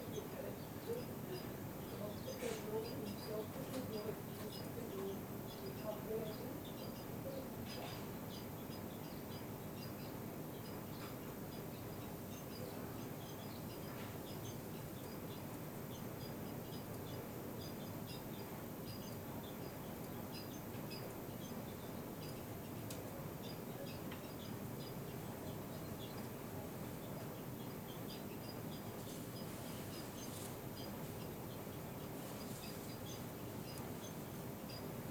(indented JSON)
{
  "title": "Martin Buber St, Jerusalem - Library At Bezalel Academy of Arts and Design",
  "date": "2019-03-25 10:35:00",
  "description": "Library At Bezalel Academy of Arts and Design.",
  "latitude": "31.79",
  "longitude": "35.25",
  "altitude": "811",
  "timezone": "Asia/Jerusalem"
}